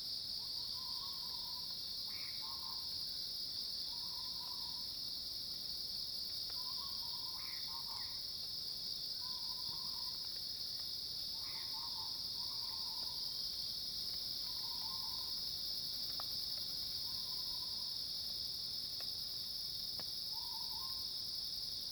Nantou County, Taiwan - Insects, Birds and Cicadas
Insects called, Birds call, Cicadas cries, Facing the woods
Zoom H2n MS+XY
Nantou County, Puli Township, 華龍巷164號, 19 September 2016